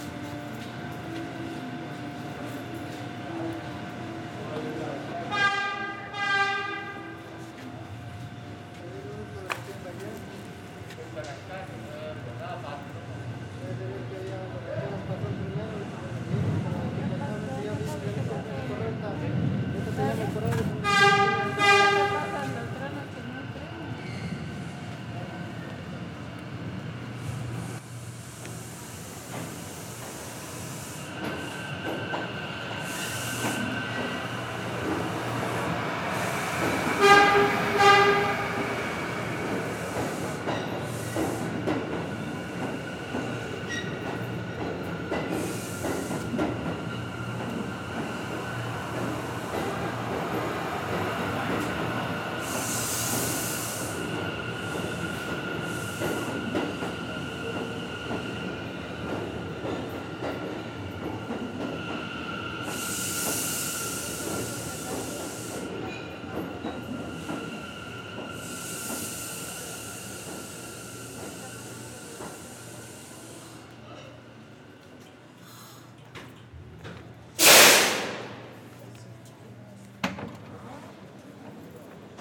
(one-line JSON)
{"title": "Delancey St, New York, NY, USA - Waiting for the J train", "date": "2022-03-07 23:05:00", "description": "Waiting for the J train at Delancey Street/Essex Street station.", "latitude": "40.72", "longitude": "-73.99", "altitude": "10", "timezone": "America/New_York"}